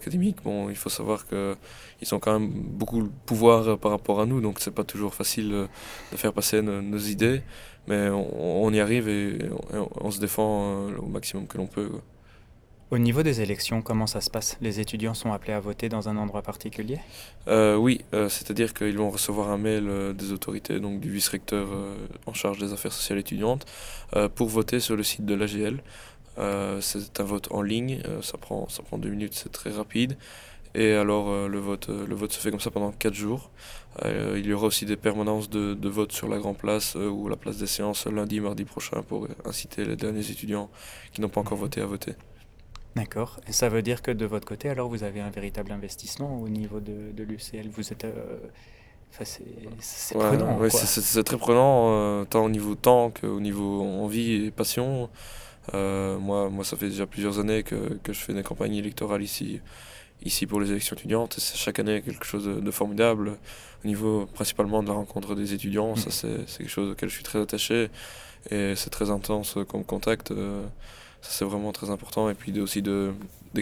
Centre, Ottignies-Louvain-la-Neuve, Belgique - Social elections
Julien Barreau is the main representative of a social list called Geronimo. This list defends students rights nearby the rector.
Ottignies-Louvain-la-Neuve, Belgium, 18 March 2016